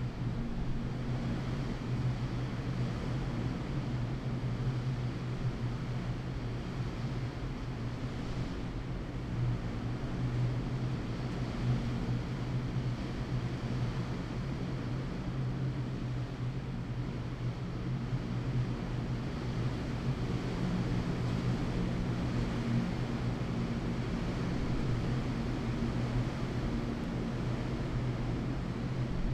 hilltop underneath big antenna array recorded in the wind shadow of a building, wind force S 29km/h
Cerro Sombrero was founded in 1958 as a residential and services centre for the national Petroleum Company (ENAP) in Tierra del Fuego.
Primavera, Región de Magallanes y de la Antártica Chilena, Chile